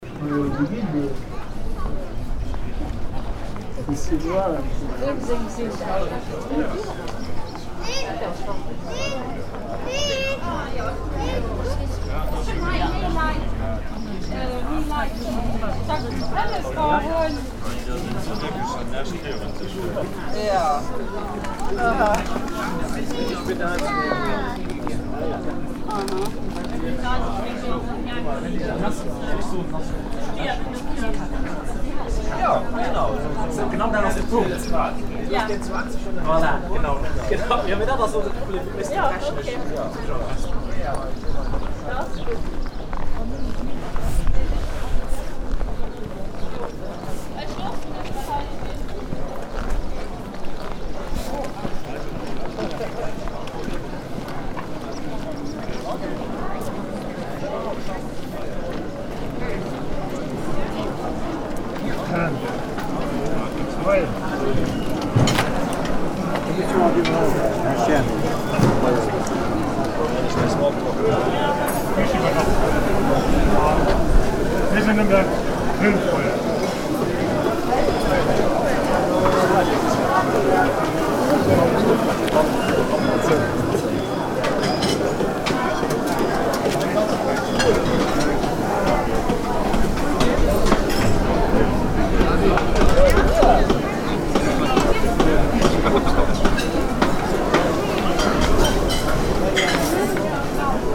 hupperdange, duarefstrooss
On the street during a public city venue day. The vivid sound of many voices and walking people inside several food and sale stands and information points.
Hupperdange, Duarrefstrooss
Auf der Straße während einem Ortsfest. Das lebendige Geräusch von vielen Stimmen und umher laufende Menschen an mehreren Essens- und Verkaufsständen und Informationspunkten. Aufgenommen von Pierre Obertin während eines Stadtfestes im Juni 2011.
Hupperdange, Duarrefstrooss
Dans la rue pendant une fête locale. Le bruit vivant de nombreuses voix et des gens qui courent dans toutes les directions, sur des stands d’alimentation, de vente et d’information. Enregistré par Pierre Obertin en mai 2011 au cours d’une fête en ville en juin 2011.
Project - Klangraum Our - topographic field recordings, sound objects and social ambiences
Luxembourg, 2 August 2011, 18:32